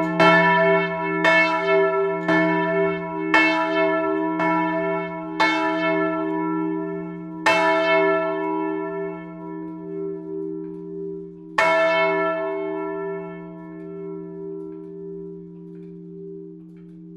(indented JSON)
{
  "title": "erkrath, kreuzstrasse, st. johannes, mittagsglocken",
  "latitude": "51.22",
  "longitude": "6.91",
  "altitude": "66",
  "timezone": "GMT+1"
}